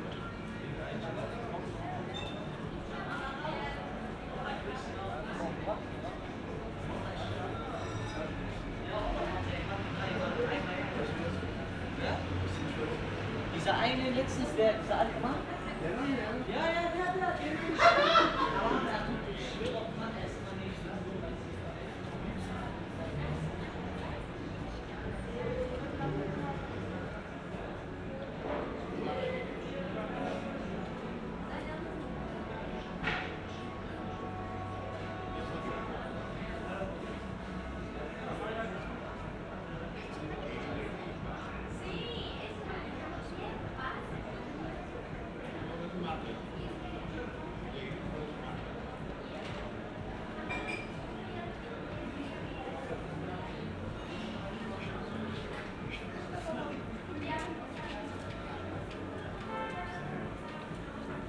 Berlin, Kotti, Bodegga di Gelato - the city, the country & me: in front of bottega del gelato
guests of the bottega ordering ice cream, passers-by
the city, the country & me: august 27, 2012